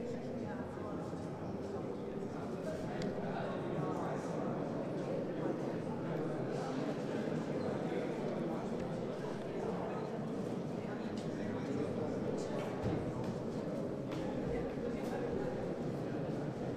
Mitte, Berlin, Deutschland - Congregation
Congregation leaves St.Michael after Sunday's mass. The difference between the two soundscapes is always fascinating for me, & there's hardly anything more drawing me in than the sound of the human voice. "h2".